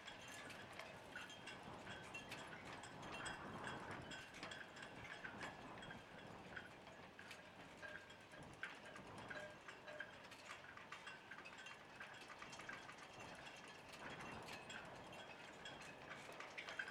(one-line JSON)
{"title": "Av. Jean Ruet, Le Touquet-Paris-Plage, France - Base nautique - Le Touquet", "date": "2020-02-17 15:30:00", "description": "Le Touquet (Département du Pas-de-Calais)\nBase Nautique - ambiance", "latitude": "50.54", "longitude": "1.59", "altitude": "6", "timezone": "Europe/Paris"}